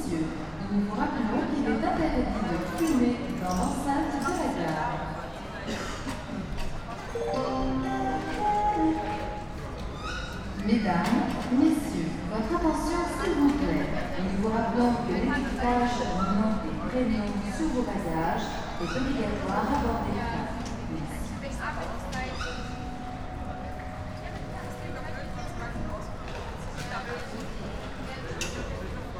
Aix-en-Provence TGV station, hall ambience from a 1st floor platform
Cabriès, France, 11 January, 08:00